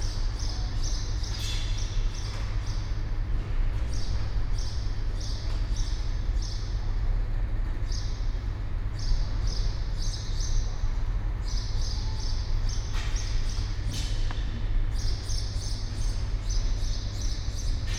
Panellinios indoor hall, Athen - hall ambience, birds, a man cleaning the floor
inside Panellinios indoor sport hall. I was attracted by birdsong inside, at the open door, thus entering. After a while, a man with squeaking shoes started to clean the wooden floor.
(Sony PCM D50, Primo EM172)